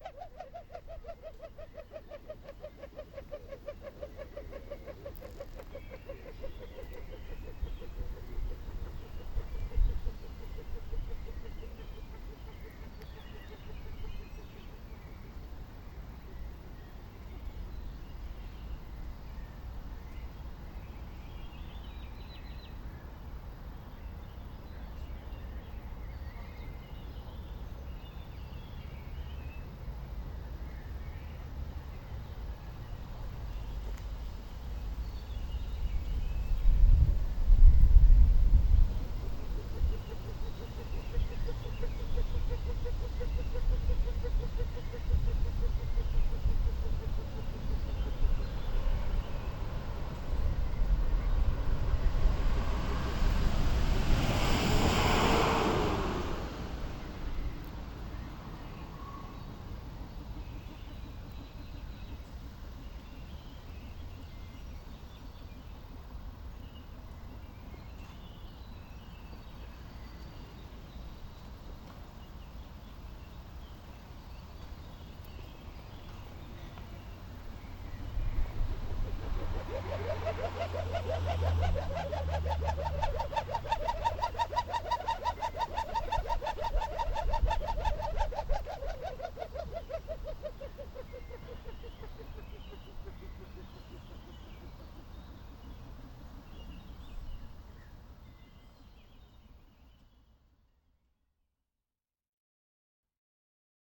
croatian tel and radio building
rubber bands in the wind in front of the Croatian radio, in the framework of UBU workshop